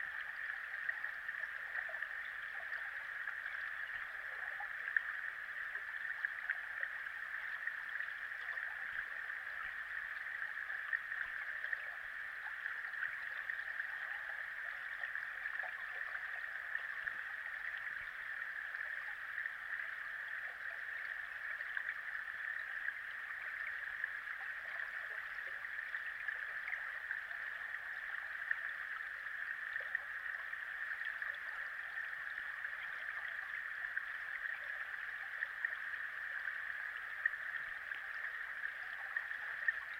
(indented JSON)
{
  "title": "Colchester, Colchester, Essex, UK - Middle Mill Wier Underwater",
  "date": "2015-12-31 17:00:00",
  "description": "Middle Mill Wier, an old water will that now functions as a waterfall. Hydrophone recordings, the weather was mild but there had been rainfall and the river level was slightly higher/more murkier than usual. Recording around 5:00pm.",
  "latitude": "51.89",
  "longitude": "0.90",
  "altitude": "10",
  "timezone": "Europe/London"
}